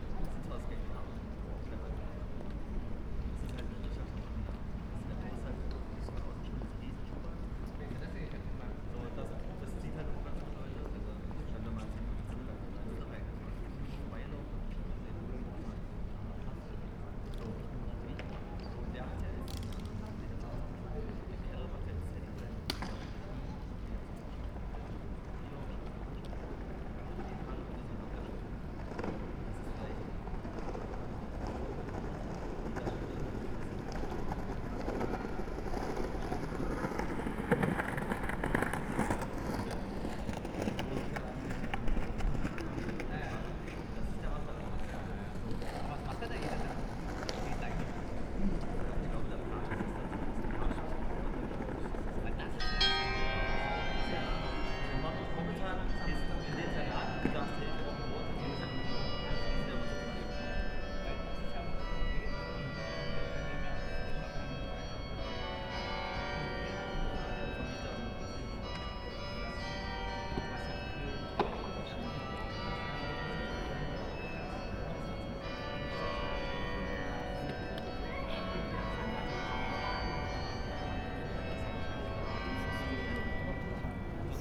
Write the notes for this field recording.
Sunday evenig at Marktplatz, Halle. No cars around, surprising. Sound of trams, 6pm bells, (Sony PCM D50, Primo EM172)